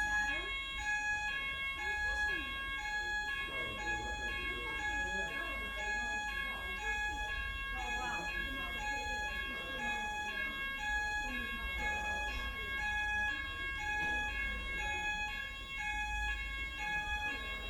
three carol singing reindeer ... and a fire alarm ... animatronic reindeer singing carols greeting customers at the entrance to a store ... then the fire alarm goes off ... lavalier mics clipped to bag ... background noise ... voices ... sliding door ...